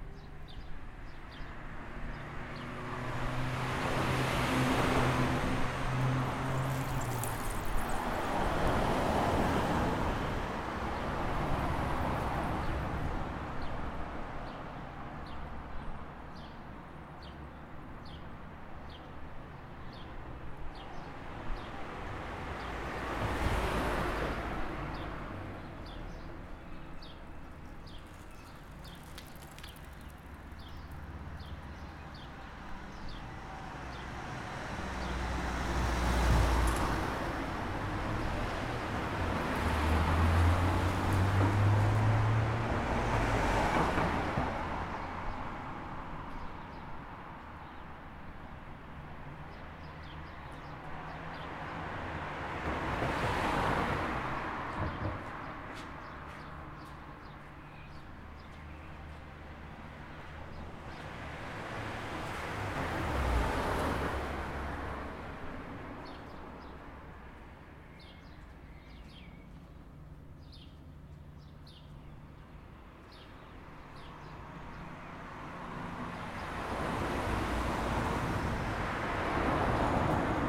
Ex border Border between Slovenia and Italy - IN - OUT
traffic on the ex border between Slovenia and Italy
Gorizia GO, Italy, 7 June 2017, 11:00